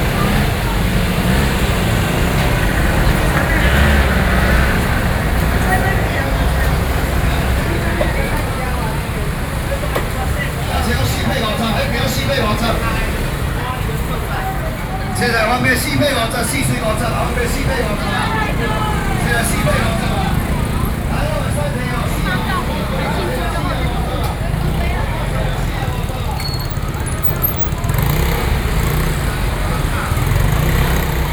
Desheng St., Luzhou Dist., New Taipei City - Traditional markets